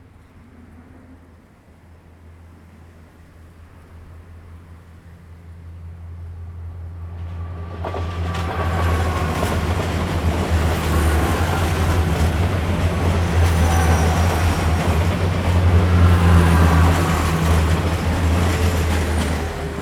Fuli Township, Hualien County - beside the tracks
beside the tracks, Traffic Sound, Train traveling through, Very hot weather
Zoom H2n MS+ XY